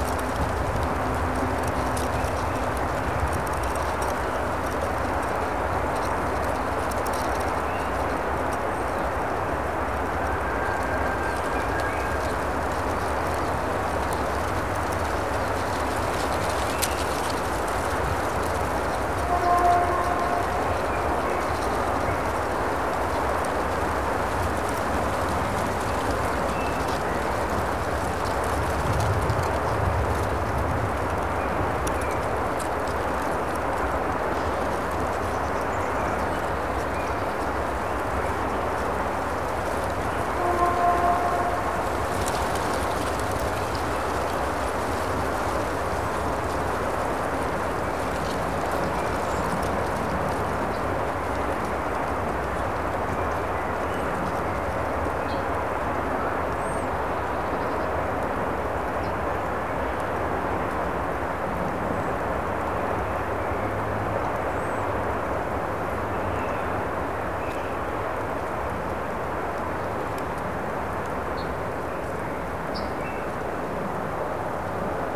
Morasko, forest path - shrivelled leaves
recorder pointed towards small forest, close to a bunch of dried leaves, lots of bird and insect activity in the forest due to very mild weather.